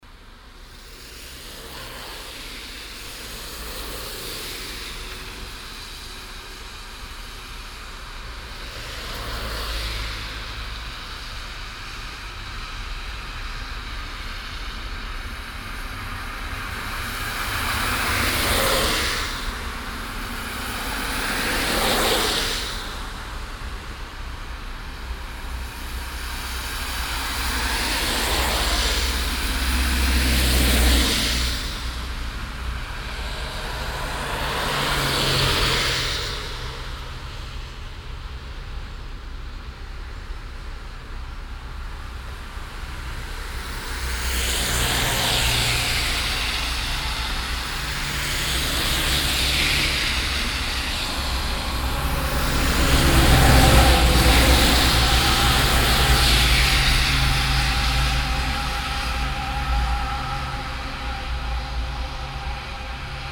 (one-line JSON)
{"title": "refrath, lustheide, nasse fahrbahn", "description": "strassenverkehr auf nasser strasse, morgens\nsoundmap nrw:\nsocial ambiences/ listen to the people - in & outdoor nearfield recording", "latitude": "50.95", "longitude": "7.11", "altitude": "69", "timezone": "GMT+1"}